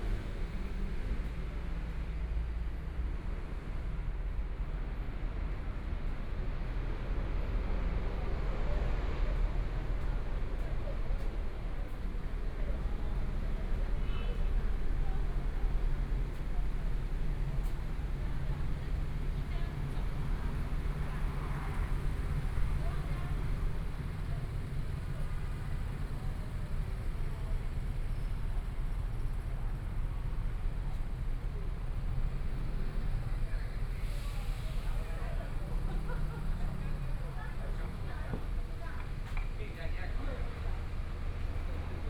Zhongshan N. Rd., Zhongshan District - Walking on the road
Walking on the road, Traffic Sound, Aircraft traveling through, Binaural recordings, Zoom H4n + Soundman OKM II